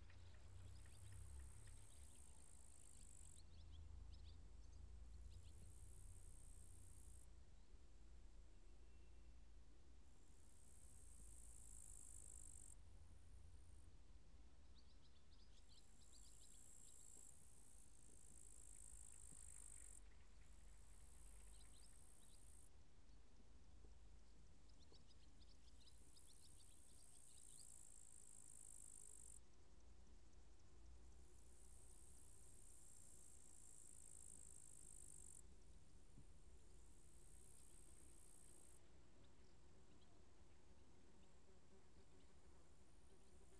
Kreva, Belarus, in castle ruins
2 August 2015